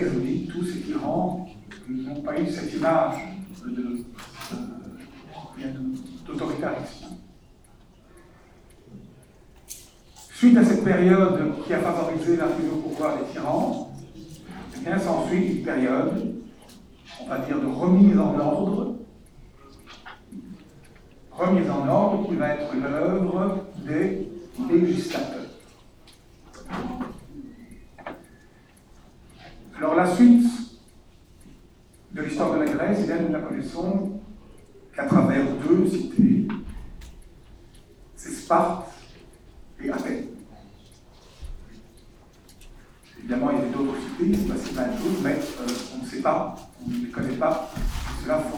A course of antic history, in the huge auditoire called Croix du Sud.
Ottignies-Louvain-la-Neuve, Belgium, 11 March 2016, ~16:00